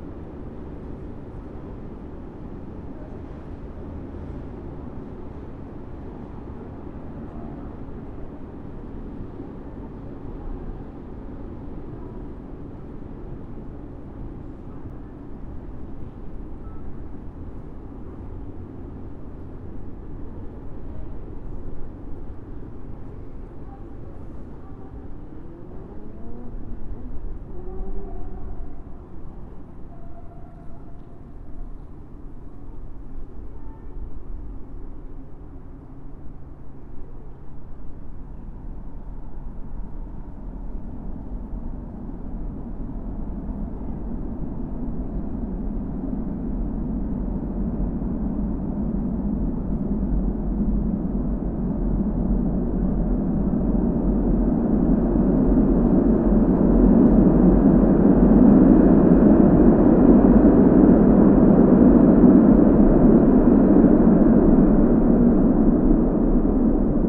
Rouen, France - Jeanne d'Arc bridge
Below the Jeanne d'Arc bridge, with the heavy sound of the tramways.